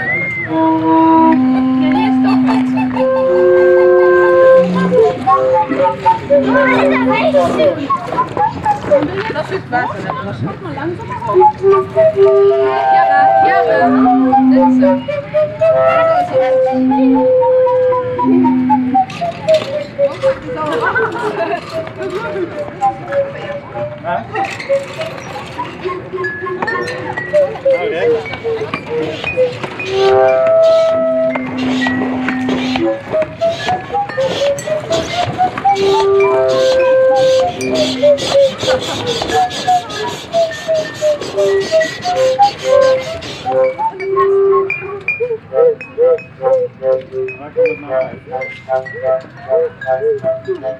Hosingen, Luxemburg - Hosingen, nature park house, summer fair, water orchestra
Auf dem Sommer-Familienfest des Naturpark Hauses. Die Klänge des Wasserorchesters.
At the summer family fair of the nature park house. The sounds of the water orchestra.
Hosingen, Luxembourg, 5 August 2012, 14:30